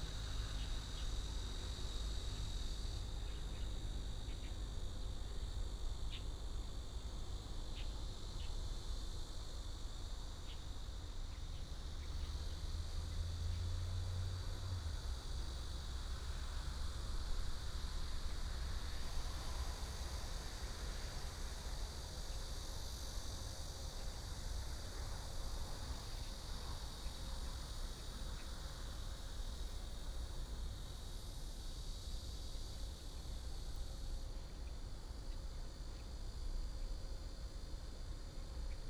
海濱路240-2號, North Dist., Hsinchu City - Facing the woods
Facing the woods, traffic sound, bird sound, Dog, Cicada cry, The sound of the garbage disposal field